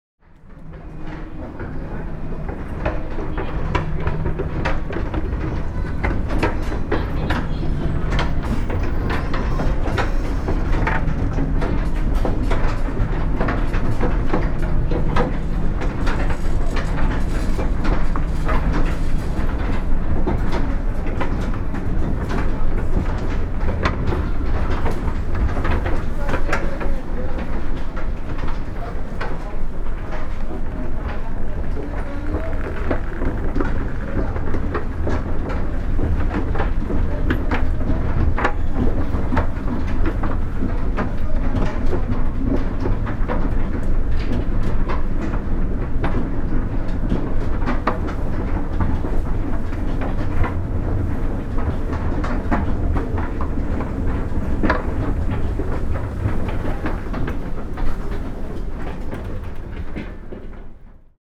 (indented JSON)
{
  "title": "Old Wooden Escalator - Macys New York, USA",
  "date": "2019-07-03 10:47:00",
  "description": "Old wooden escalator. Recorded with a Sound Devices Mix Pre and 2 Beyer Lavaliers.",
  "latitude": "40.75",
  "longitude": "-73.99",
  "altitude": "17",
  "timezone": "America/New_York"
}